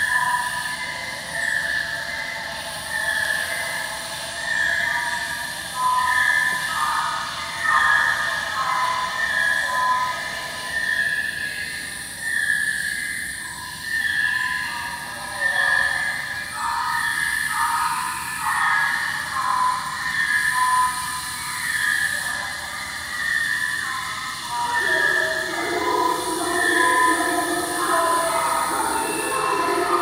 Stephen and Peter Sachs Museum, St. Louis, Missouri, USA - Welcome Home Habitat
"Welcome Home Habitat" sound installation by Kevin Harris. Part of the Botanical Resonance: Plants and Sounds in the Garden exhibition in the Henry Shaw Museum (renamed the Stephen and Peter Sachs Museum after its restoration) at the Missouri Botanical Gardens.
Missouri, United States, 6 August 2022, ~14:00